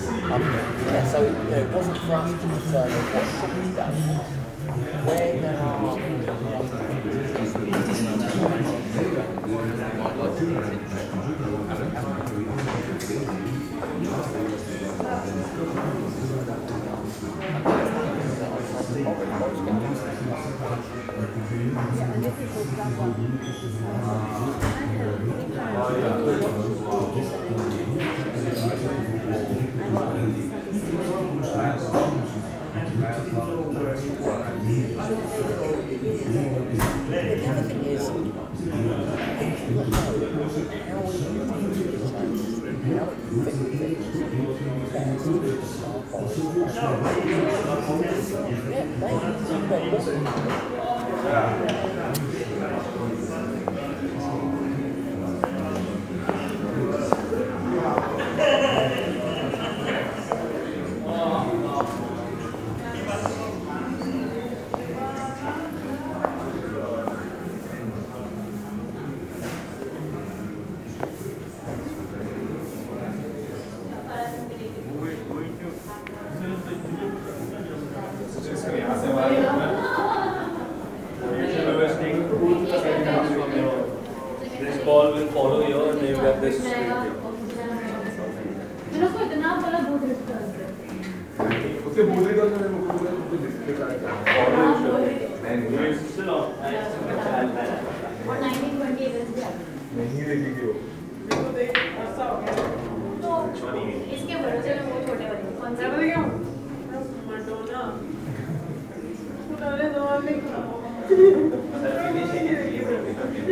holiday Inn hotel: wlking around: hall, bar, snooker pool and exit. multlingual environment
Machelen, Belgium